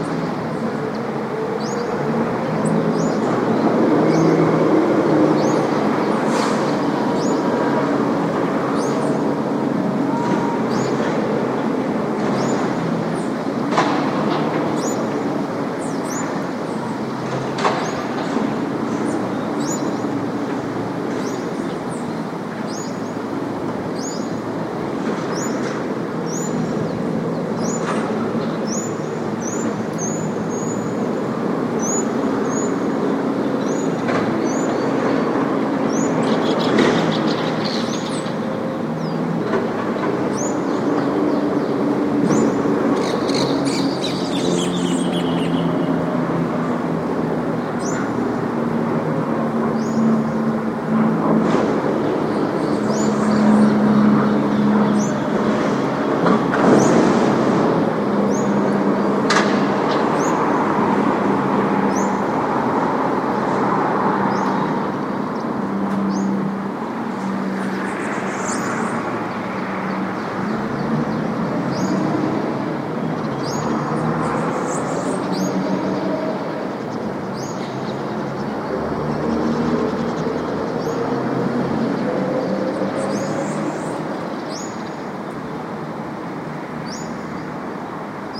{"title": "SQN 303 - Bloco F - Brasília, Brazil - AQN 303 - Bloco F - Brasília, Brazil", "date": "2010-07-18 09:30:00", "description": "ambient sound in SQN 303 - Brasília, Brazil - WLD", "latitude": "-15.78", "longitude": "-47.89", "altitude": "1102", "timezone": "America/Sao_Paulo"}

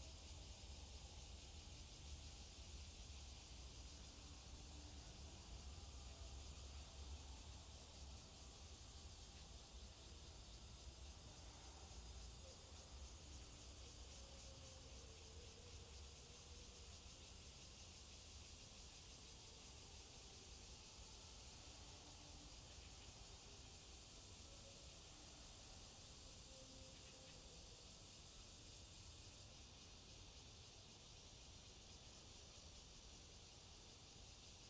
{"title": "義民路二段380巷57弄, Xinpu Township - Near the tunnel", "date": "2017-08-17 08:27:00", "description": "Near the tunnel, birds call, Cicadas sound, High speed railway, The train passes through\nZoom H2n MS+XY", "latitude": "24.84", "longitude": "121.05", "altitude": "60", "timezone": "Asia/Taipei"}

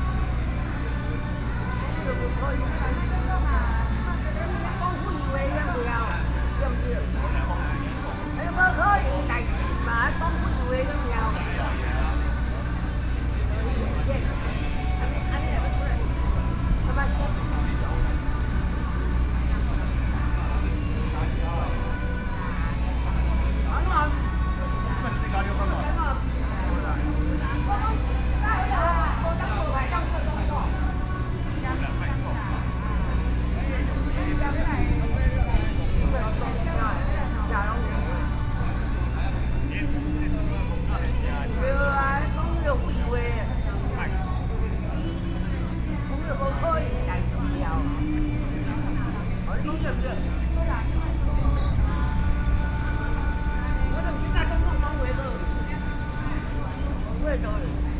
{"title": "Ministry of the Interior, Taipei City - Quarrel", "date": "2013-08-19 15:34:00", "description": "In the sit-in protests next, Two middle-aged people are quarreling, Because of differences in political ideas, Sony PCM D50 + Soundman OKM II", "latitude": "25.04", "longitude": "121.52", "altitude": "15", "timezone": "Asia/Taipei"}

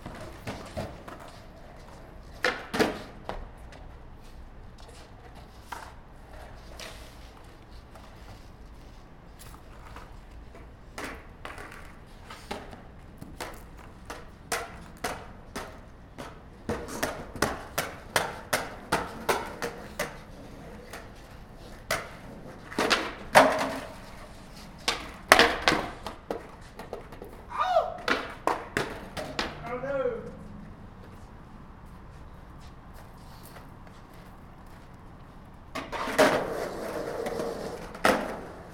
{"title": "E Cache La Poudre St, Colorado Springs, CO, USA - Sunday Night Skating", "date": "2018-04-29 20:51:00", "description": "South Hall Residents skating on a warm night. Zoom H1 placed in the inner courtyard of South Hall about 4ft off the ground on a tripod. No dead cat used.", "latitude": "38.85", "longitude": "-104.82", "altitude": "1845", "timezone": "America/Denver"}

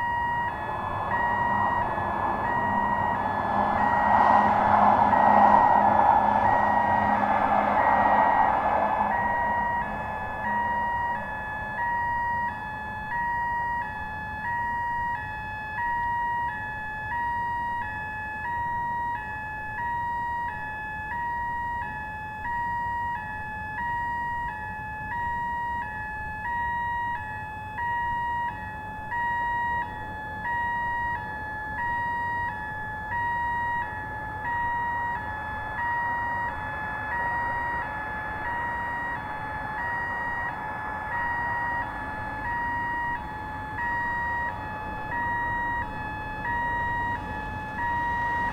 {"title": "North Manchester - Alarm Bleeping", "date": "2011-02-10 23:45:00", "description": "An alarm constantly bleeping, late at night.", "latitude": "53.54", "longitude": "-2.28", "altitude": "103", "timezone": "Europe/London"}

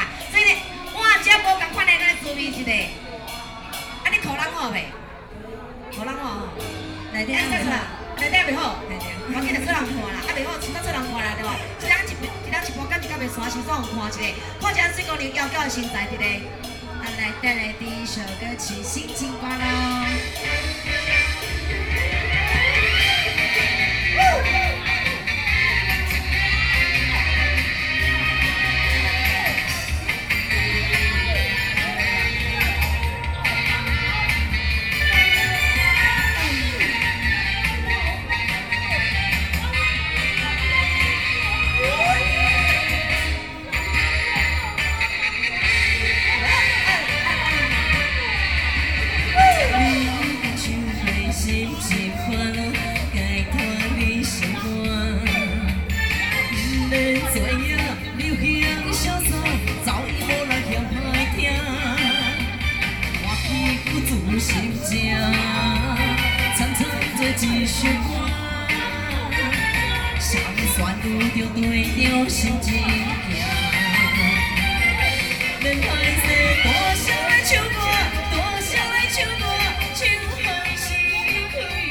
{"title": "Beitou, Taipei - Community party", "date": "2013-09-02 19:47:00", "description": "Taiwanese opera and Taiwan Folk temple activities, Sony PCM D50 + Soundman OKM II", "latitude": "25.14", "longitude": "121.50", "altitude": "14", "timezone": "Asia/Taipei"}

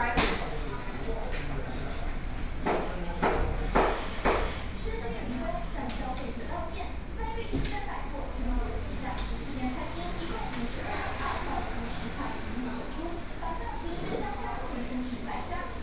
{"title": "Sec., Zhongshan Rd., Su’ao Township - In the restaurant", "date": "2013-11-07 12:39:00", "description": "Inside the restaurant, TV news sound, Zoom H4n+ Soundman OKM II", "latitude": "24.60", "longitude": "121.85", "altitude": "11", "timezone": "Asia/Taipei"}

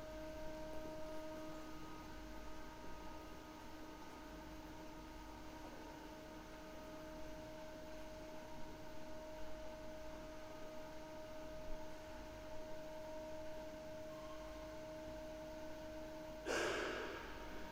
{"title": "Nossa Senhora do Pópulo, Portugal - Corredor das oficinas", "date": "2014-03-04 19:12:00", "description": "Wood, Metal and Ceramics workshop corridor of the school. Recorded with Sony pcm-d50", "latitude": "39.39", "longitude": "-9.14", "timezone": "Europe/Lisbon"}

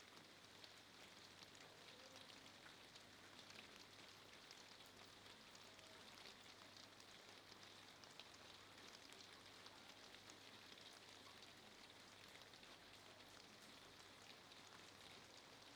{"title": "Pl. de la Gare, Houdain, France - Houdain - Pluie", "date": "2022-09-23 21:43:00", "description": "Houdain (Pas-de-Calais)\nPremières pluies d'automne.\nsur le toit de la terrasse (surface plastique/plexiglass)\nZOOM F3 + Neumann KM 184", "latitude": "50.45", "longitude": "2.53", "altitude": "54", "timezone": "Europe/Paris"}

